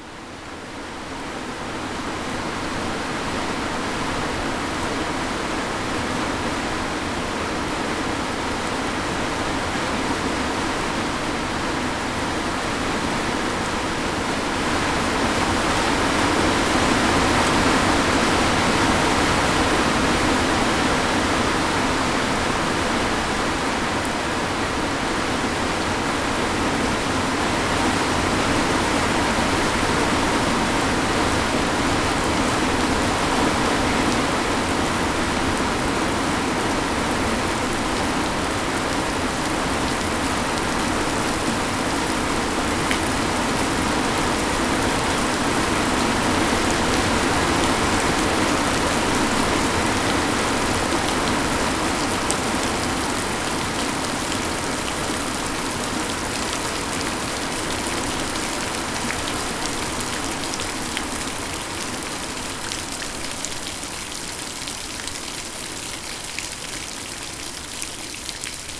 Sasino, summerhouse at Malinowa Road - storm fade out
a sudden, intense storm bursts out. recording on a covered porch. It all takes only couple of minutes and the downpour stops within 20 seconds. the static of the rain changes into specious, calming ambience of water flowing in gutters, drops falling from the roof and birds in the trees nearby. (roland r-07)